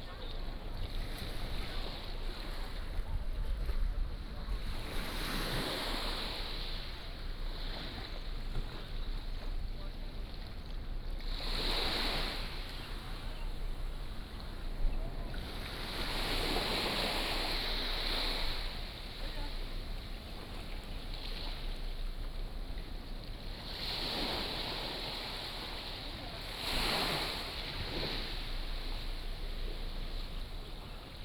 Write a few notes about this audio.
Thunder and waves, Sound of the waves, Small fishing port, Tourists